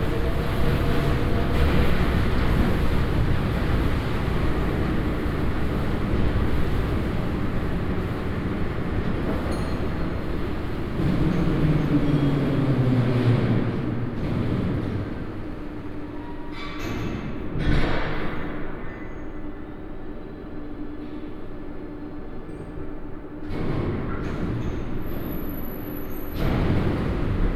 From inside the coach while it's lifted and workers assemble a new floor. The train including all passengers entered a hall where the process takes place in order to make it fit the size of Russian rails. Binaural recording (Tascam DR-07 + OKM Klassik II).
Brest, Belarus, 8 October 2015